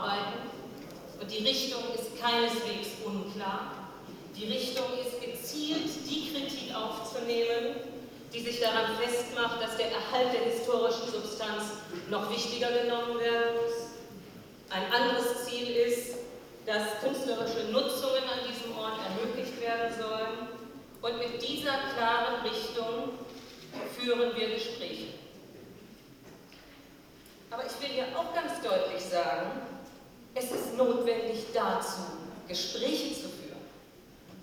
Aktuelle Stunde Hamburgische Bürgerschaft. Stadtentwicklung, Gängeviertel, Kunst, Kommerz - Anja Hajduk, Senatorin Stadtentwicklung und Umwelt. 04.11.2009
THEMEN DER AKTUELLEN STUNDE
1. Wer gegen wen? Kultur - Kommerz – Stadtentwicklung (GAL)
2. Schwarz-grüne Haushaltsakrobatik - statt klarer Informationen planloser
Aktionismus (DIE LINKE)
3. Für ganz Hamburg - stadtverträgliche Entwicklung des Gängeviertels (CDU)
4. Gängeviertel - Stadtentwicklungspolitik nach dem Motto „Alles muss raus“ (SPD)